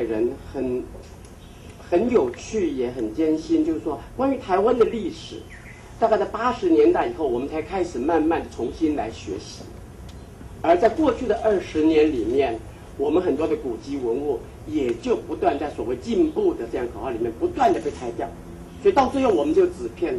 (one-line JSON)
{"title": "Lo-Sheng Sanatorium, Department of Health, Executive Yuan, Taiwan - Press conference", "date": "2007-03-28 15:00:00", "description": "Taiwan's renowned director and choreographer for the Lo-Sheng Sanatorium incident to the government protests, Sony ECM-MS907, Sony Hi-MD MZ-RH1", "latitude": "25.02", "longitude": "121.41", "altitude": "43", "timezone": "Asia/Taipei"}